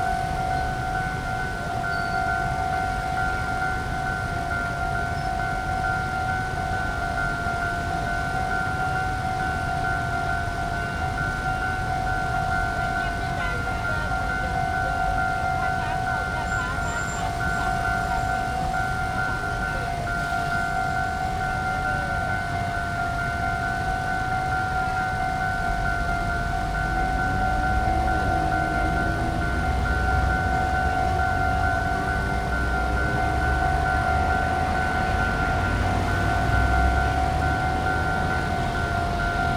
Zuoying - Level crossing
Warning tone, Train traveling through, Traffic Noise, Rode NT4+Zoom H4n